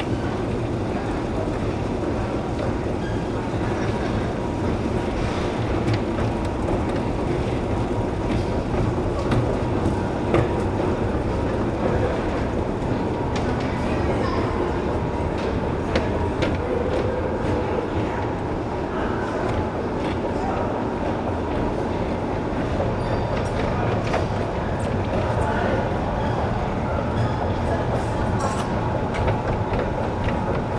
Subway Vokzalnya, Dnipro, Ukraine - Subway Vokzalnya [Dnipro]
Dnipropetrovsk Oblast, Ukraine, 28 May 2017, ~23:00